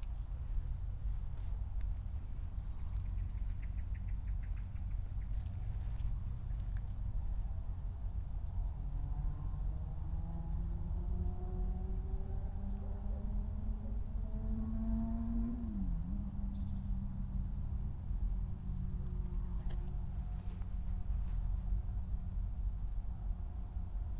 Šturmovci, Slovenia - muddy walk
muddy path and already wet shoes, birds sing and flutter, river gull and hydro power plant from afar